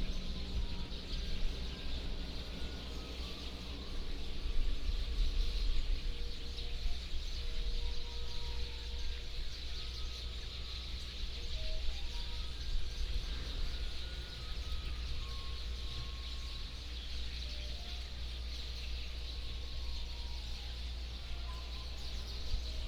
金崙村, Taimali Township - Birdsong
Small village .Traffic Sound, Birdsong
Taitung County, Taiwan